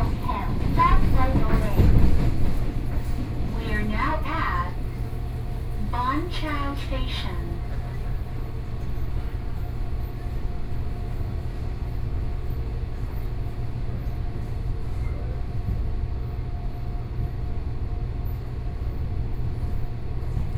Huácuì Bridge, Banqiao District, New Taipei City - On the train